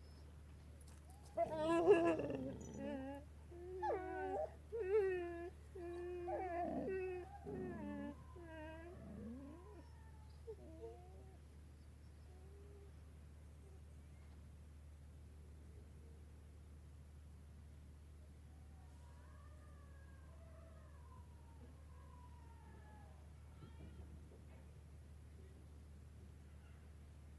Niaqornat, Grønland - Bygdelyde
The ambiance and dog sounds of the small village Niaqornat in the late evening. Recorded with a Zoom Q3HD with Dead Kitten wind shield.
18 June 2013, 22:15